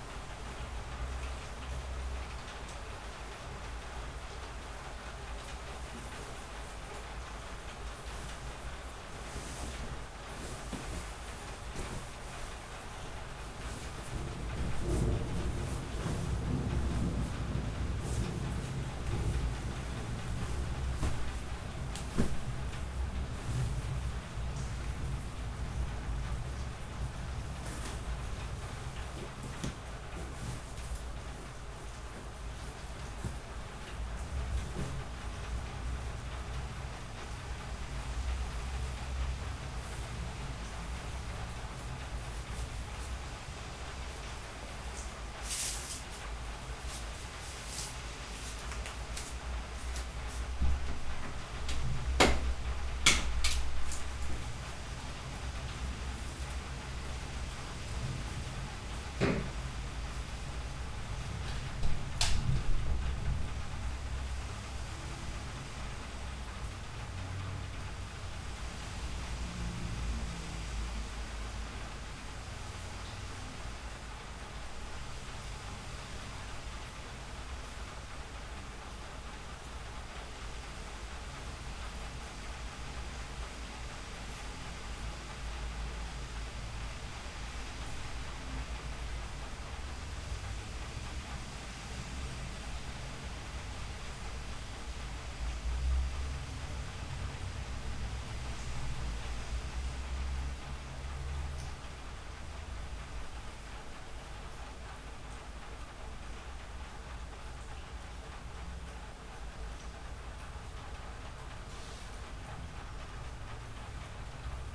Cardener Street, Barcelona, España - Morning rain
Morning rain recorded with binaural mics stuck into a window.
Barcelona, Spain